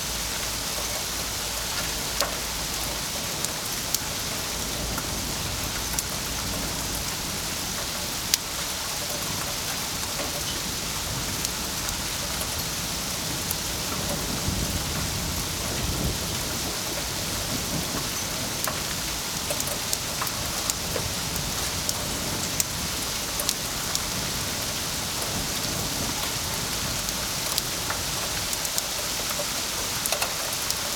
Manlleu, Barcelona, España - Pedregada
Pedregada/Manlleu/Cataluña
Manlleu, Barcelona, Spain, 18 April 2012, ~02:00